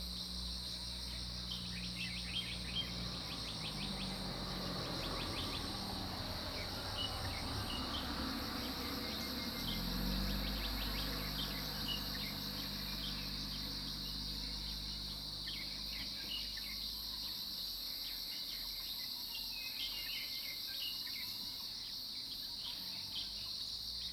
Zhonggua Rd., 桃米里 Puli Township - Early morning
In the morning, Bird calls, Crowing sounds, Cicadas cry
Zoom H2n MS+XY
Nantou County, Taiwan, 12 June